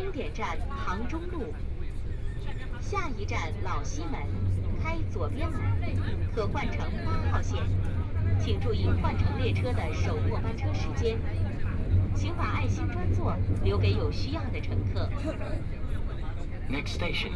From East Nanjing Road Station to Laoximen Station, Binaural recording, Zoom H6+ Soundman OKM II
Huangpu, Shanghai, China